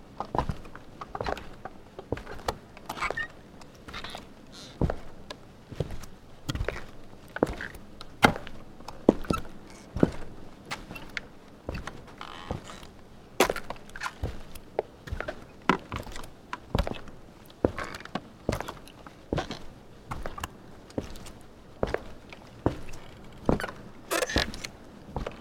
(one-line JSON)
{"title": "Viaducto de Conchi - Footsteps on a wooden bridge", "date": "2017-07-13 12:00:00", "description": "Footsteps on a wooden bridge.\nRecorded by a MS Setup Schoeps + Sound Devices 633 Recorder", "latitude": "-22.03", "longitude": "-68.62", "altitude": "2955", "timezone": "America/Santiago"}